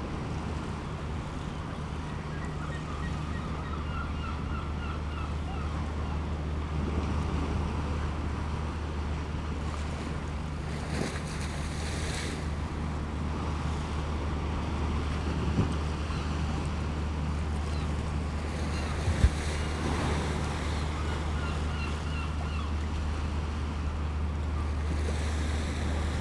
Whitby, UK - 30 minutes on East Pier ... Whitby ...
30 minutes on Whitby East pier ... waves ... herring gull calls ... helicopter fly thru ... fishing boats leaving and entering the harbour ... open lavalier mics clipped to sandwich box ...
29 April, 10:00